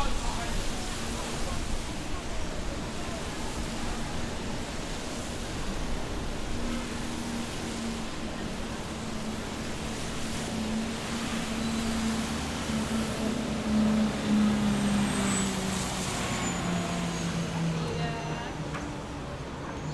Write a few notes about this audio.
Exiting Lexington Ave/59 street subway station. Zoom H6